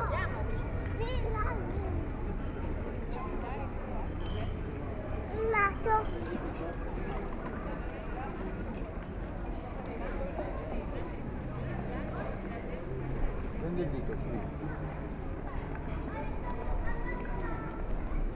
Piazza Arringo, one of the city's main squares, people stroll and relax
edirol R-09HR